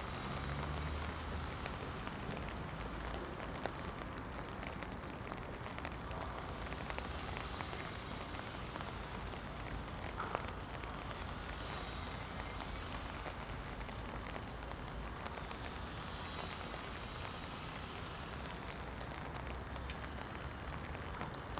Contención Island Day 15 inner east - Walking to the sounds of Contención Island Day 15 Tuesday January 19th
The Drive Moor Crescent Moorfield Ilford Road
Two men with hi-viz stripes
work on the platforms
Across the track a dunnock
picks its way through the shrubbery
The outbound train has nine passengers
a decreased service
England, United Kingdom, 19 January, 10:36